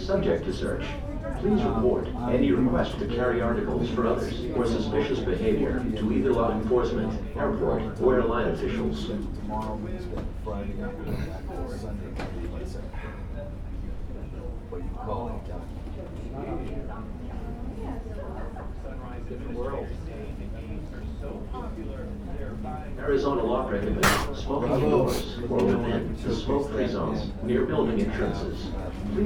neoscenes: Gate 47, Sky Harbor Airport